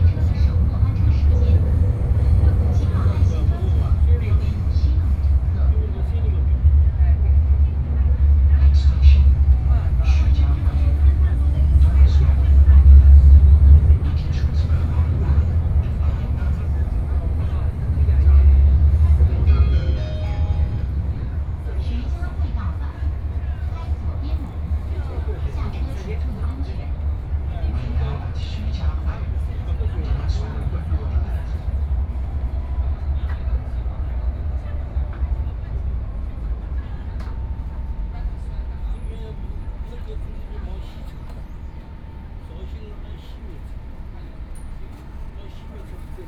Huashan Road, Shanghai - Line 11 (Shanghai Metro)
from Jiaotong University Station to Xujiahui station, Walking through the subway station, Binaural recording, Zoom H6+ Soundman OKM II
Shanghai, China